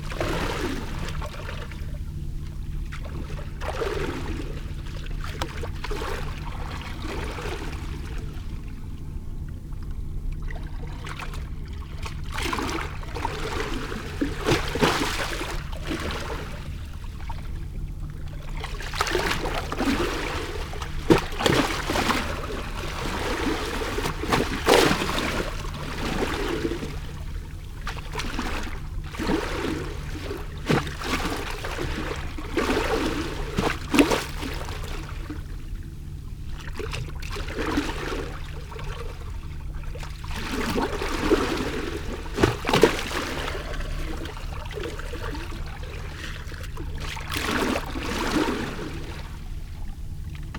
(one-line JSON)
{"title": "Novigrad, Croatia - lyrics of concrete stairs and sea wave waters", "date": "2015-07-18 23:16:00", "description": "as water is almost everything we are, dynamics of solid and fluid is there somewhere in between all the time", "latitude": "45.31", "longitude": "13.56", "timezone": "Europe/Zagreb"}